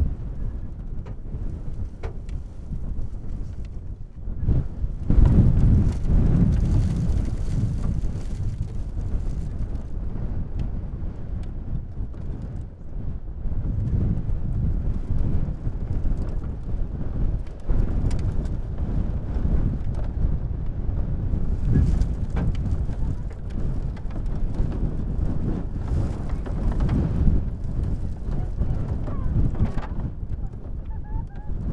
heavy wind knocking around a pair of sleighs. recorder stuck between them. gusts of wind and crackle of ice shards. (sony d50)
Pec pod Sněžkou, Czechia